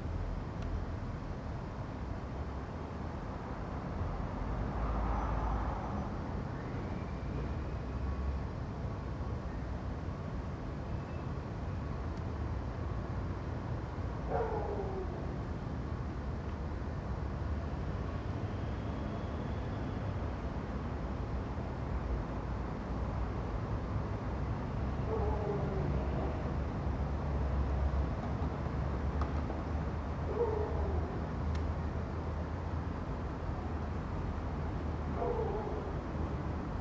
Contención Island Day 18 inner north - Walking to the sounds of Contención Island Day 18 Friday January 22nd
The Poplars High Street woodbine Avenue Back High Street
A man goes to the solicitors
A couple walk by
despite its small blue coat
their whippet looks cold
Traffic is not really distinguishable
A herring gull chuckles
a crow calls
England, United Kingdom, 22 January 2021